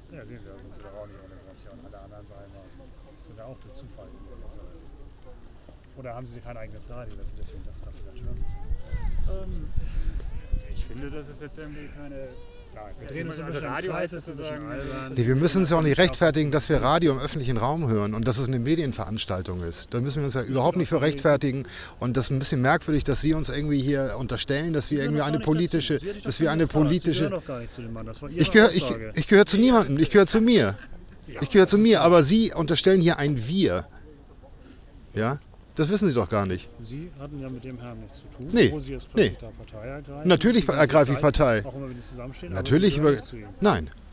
Sendung Radio FSK/Aporee in der Großen Bergstraße wird von der Polizei verboten. Teil 6 - 1.11.2009
Hamburg, Germany, November 2009